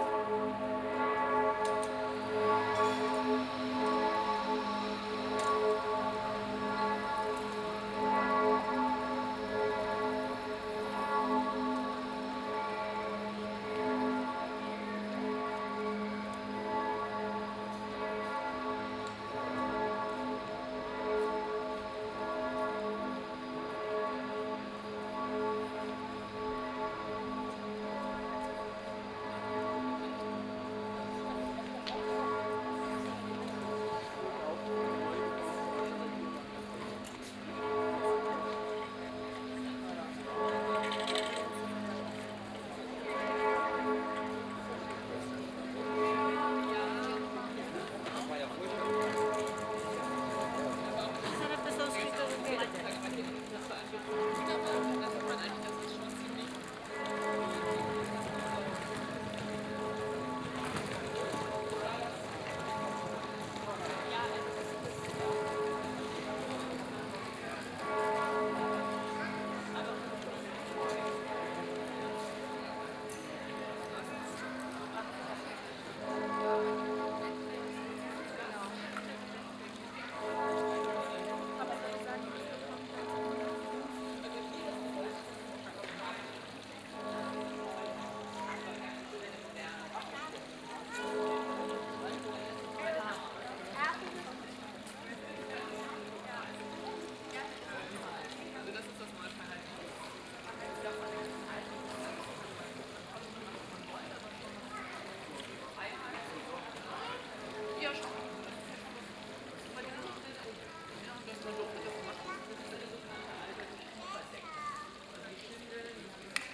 {"title": "Hühnermarkt, Frankfurt am Main, Deutschland - 14th of August 2018 Teil 5", "date": "2018-08-14 18:20:00", "description": "Fifth and last part of the recording on the 14th of August 2018 in the new 'old town' that is supposed to be opened in late September. Already a lot of guides are leading through this new area, explaining buildings and constructions. The bells of the catholic church are calling for the evening mass. The fountain of the Hühnermarkt is audible. Several voices from visitors. Some motifs are repeated: the little chapel, that is already mentioned in the first part, the character of the 'old town', the barber shop is again audible....", "latitude": "50.11", "longitude": "8.68", "altitude": "100", "timezone": "GMT+1"}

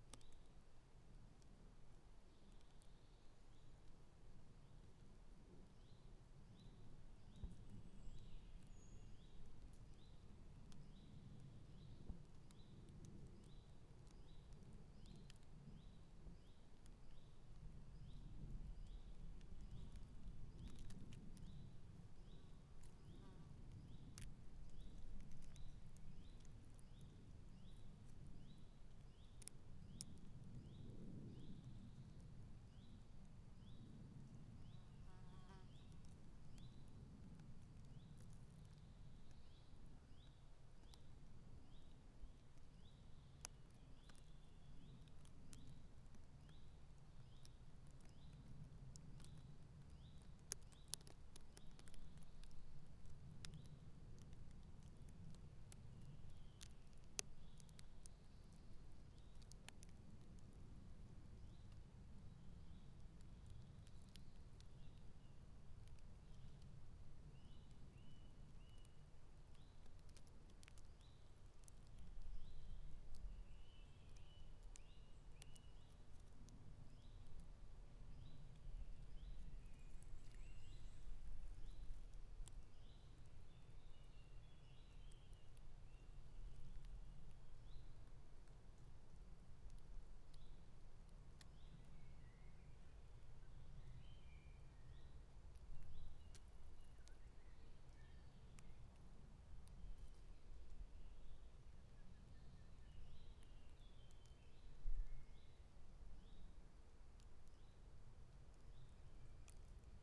{"title": "Piramida, Maribor - a long black snail, drooling his lonely way", "date": "2013-06-06 19:11:00", "description": "through dry leaves of the forest ...", "latitude": "46.58", "longitude": "15.65", "altitude": "359", "timezone": "Europe/Ljubljana"}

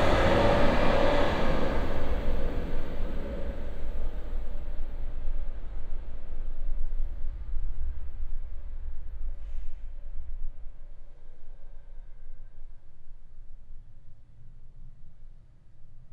{"title": "schuettbuergermillen, train tunnel", "date": "2011-08-03 00:03:00", "description": "At a mountain tunnel - a regional train passing by hooting.\nSchuettbuergermillen, Zugtunnel\nAn einem Bergtunnel - ein Regionalzug fährt tutend vorbei.\nSchuettbuergermillen, tunnel ferroviaire\nLe tunnel sous la colline – un train régional passe en actionnant son klaxon.\nProject - Klangraum Our - topographic field recordings, sound objects and social ambiences", "latitude": "49.97", "longitude": "6.02", "altitude": "285", "timezone": "Europe/Luxembourg"}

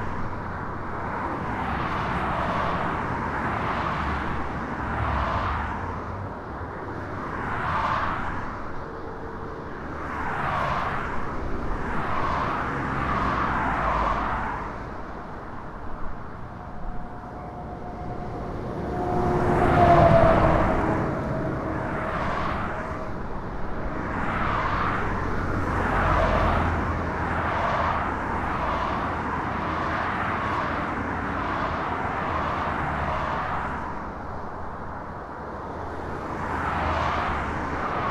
{"title": "houtribdijk: parkstreifen - the city, the country & me: parking lane", "date": "2011-07-06 14:38:00", "description": "traffic noise\nthe city, the country & me: july 6, 2011", "latitude": "52.61", "longitude": "5.44", "altitude": "1", "timezone": "Europe/Amsterdam"}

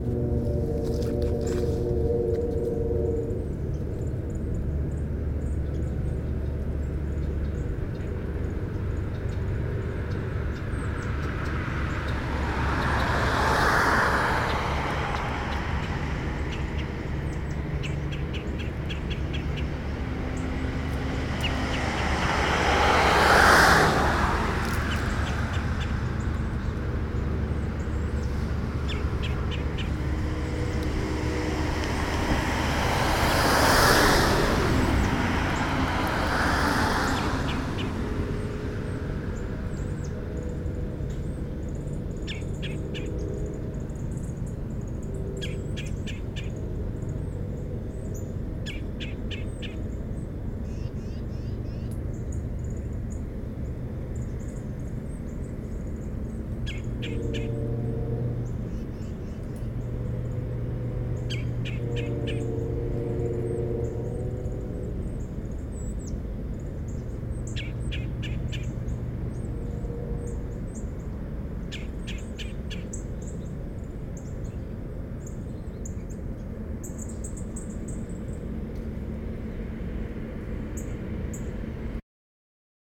{"title": "Muhlenberg College Hillel, West Chew Street, Allentown, PA, USA - Between Monroe and Wayne along Ott St", "date": "2014-12-05 08:30:00", "description": "I recorded this with a Sony recorder along Ott St. There was a lot of automobile traffic--it was 8:30 in the morning on a Friday.", "latitude": "40.60", "longitude": "-75.51", "altitude": "107", "timezone": "America/New_York"}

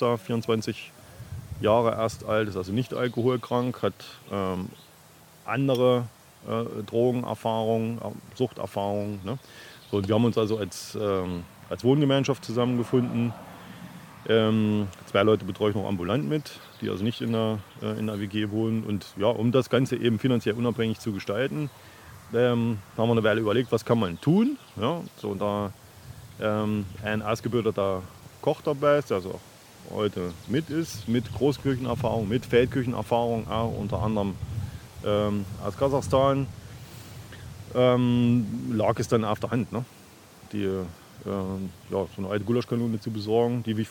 {"title": "an der b 4 - feldkueche", "date": "2009-08-08 22:30:00", "description": "Produktion: Deutschlandradio Kultur/Norddeutscher Rundfunk 2009", "latitude": "51.64", "longitude": "10.70", "altitude": "583", "timezone": "Europe/Berlin"}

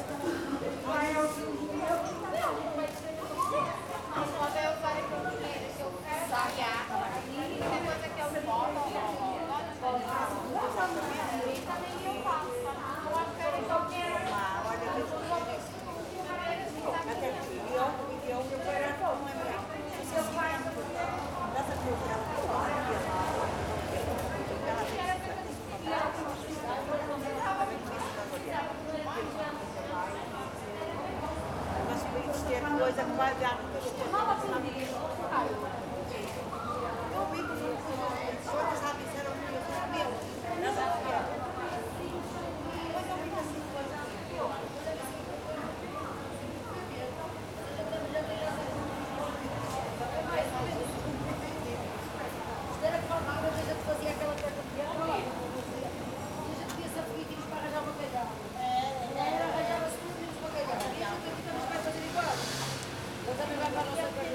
{"title": "Porto, Mercado do Bolhão - conversations at the market", "date": "2013-09-30 10:01:00", "description": "a few vendors met at the center of the market to talk about something. a short walk around the stalls. swish of meat slicing machine. German couple shopping for groceries.", "latitude": "41.15", "longitude": "-8.61", "altitude": "90", "timezone": "Europe/Lisbon"}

